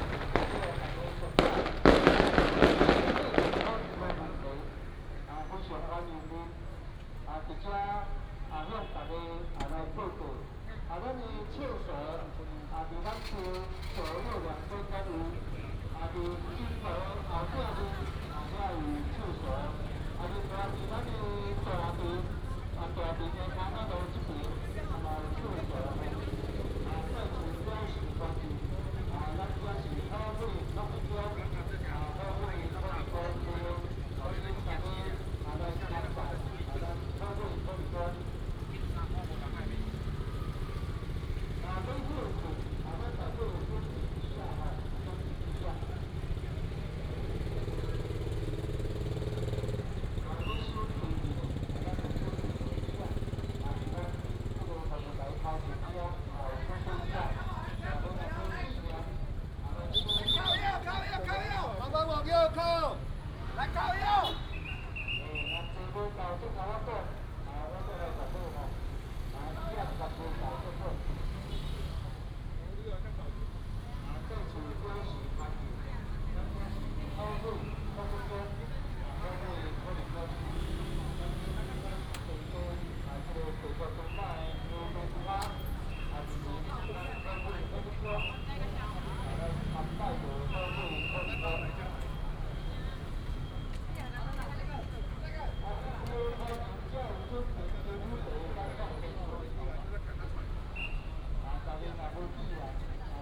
Fuxing Rd., Huwei Township - waiting for Baishatun Matsu
Firecrackers and fireworks, Many people gathered at the intersection, Baishatun Matsu Pilgrimage Procession